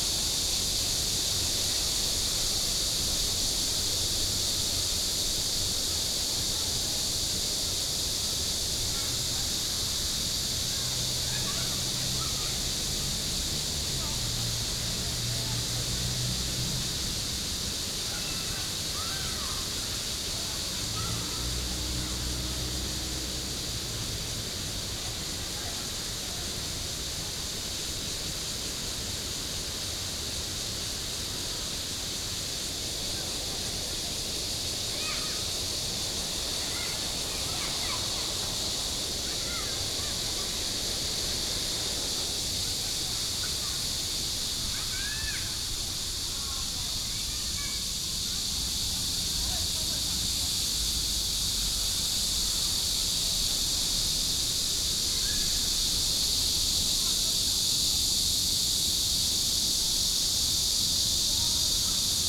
莒光公園, Zhongli Dist., Taoyuan City - Streams and cicadas
Streams and cicadas sound, in the park, Traffic sound
Zhongli District, Taoyuan City, Taiwan